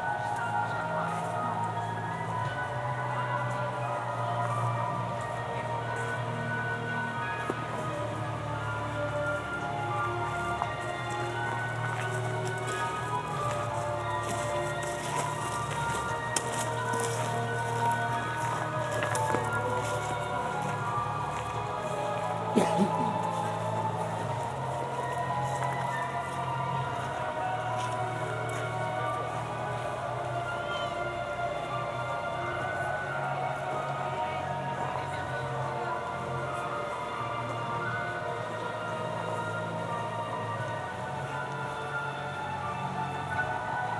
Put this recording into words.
Walk through Halloween amusement park. Sennheiser ambeo headset.